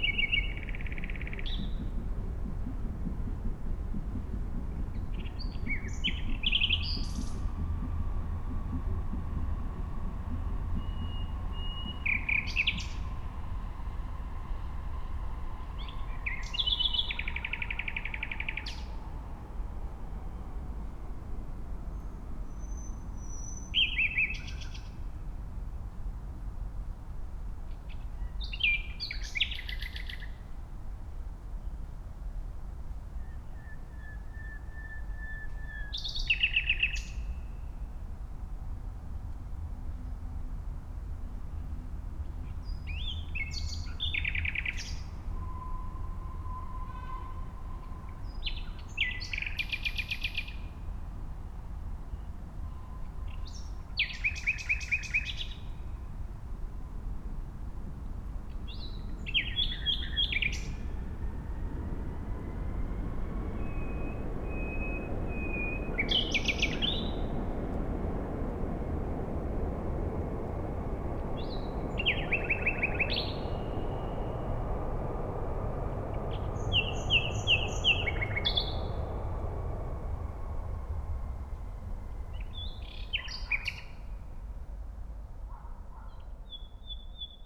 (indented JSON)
{
  "title": "Gleisdreieck park, Kreuzberg, Berlin - midnight, nightingale and trains",
  "date": "2016-05-27 00:05:00",
  "description": "Berlin, Park am Gleisdreieck, a nightingale surrounded by trains, midnight ambience\n(Sony PCM D50, Primo EM172 AB)",
  "latitude": "52.50",
  "longitude": "13.37",
  "altitude": "38",
  "timezone": "Europe/Berlin"
}